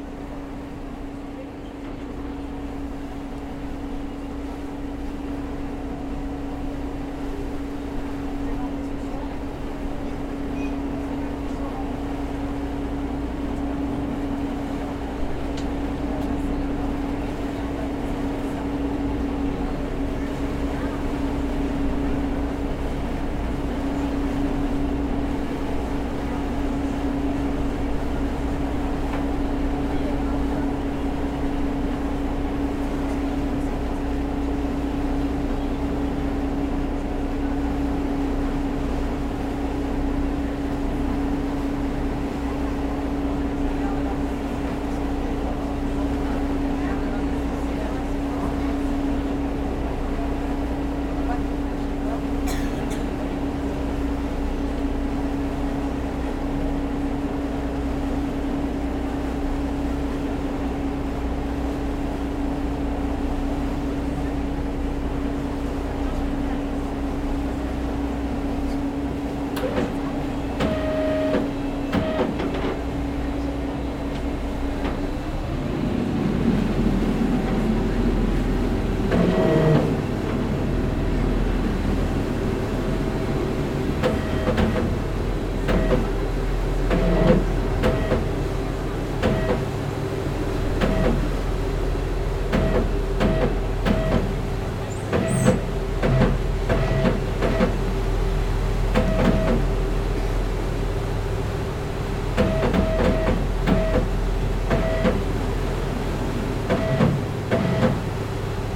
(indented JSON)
{
  "title": "Quai Napoléon, Ajaccio, France - Motor Boat",
  "date": "2022-07-28 14:00:00",
  "description": "Motor Boat\nCaptation : ZOOM H6",
  "latitude": "41.92",
  "longitude": "8.74",
  "timezone": "Europe/Paris"
}